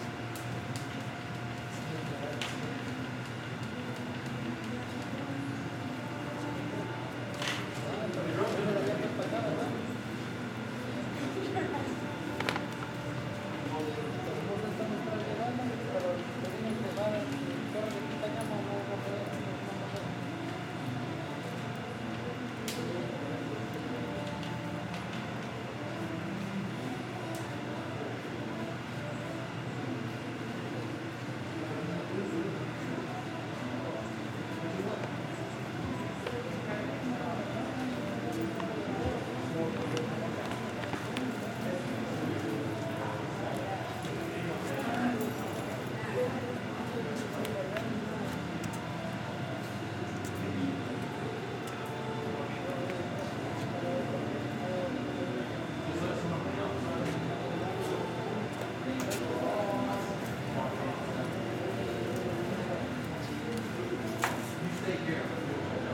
Delancey St, New York, NY, USA - Waiting for the J train
Waiting for the J train at Delancey Street/Essex Street station.
United States